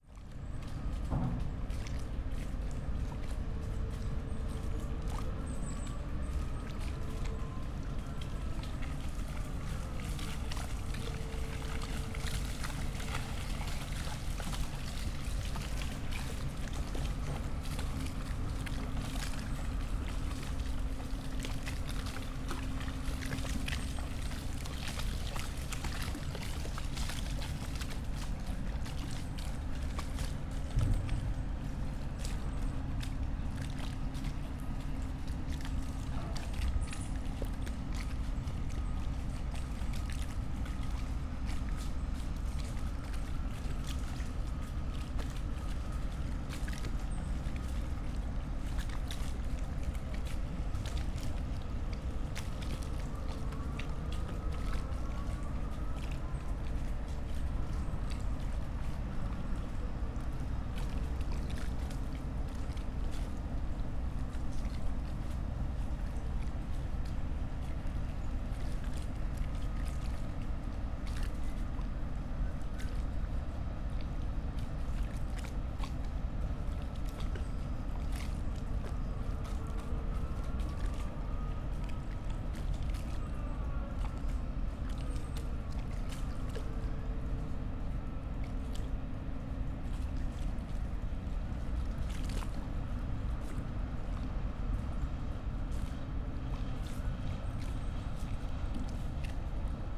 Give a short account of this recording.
place revisited, ambience on a rather warm autumn Saturday around noon, cement factory at work, boats passing, waves. (SD702, DPA4060)